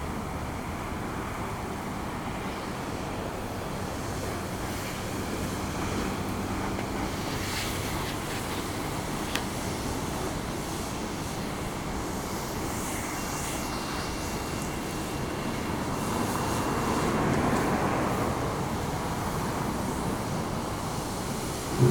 A Car Wash, Sacramento, CA, USA - Washing Cars During a Four Year Drought
Recorded on sidewalk outside of carwash. Used a handheld Sony ICD-UX533. This car was has self-washing stalls and the automatic kind. The primary sound heard is the dryer jets in the automatic automatic washing.
12 August 2015, ~14:00